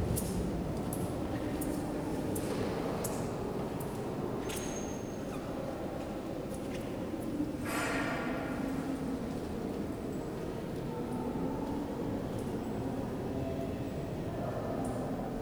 Rue de la Légion dHonneur, Saint-Denis, France - La Basilique de Saint-Denis (Lady Chapel)
The quietest spot in La Basilique de Saint-Denis with an almost constant stream of local people lighting candles and offering prayers (recorded using the internal microphones of a Tascam DR-40).